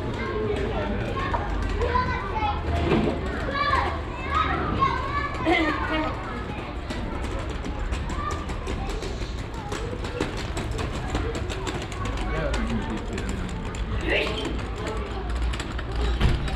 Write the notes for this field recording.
At a small street market for local handcrafted products. The sound of traders voices, working sounds at stands and steps on the old cobble stone street. At the end the sound of children voices as a kindergarden group passes by and the wheels of a small wooden cart. international city scapes - topographic field recordings and social ambiences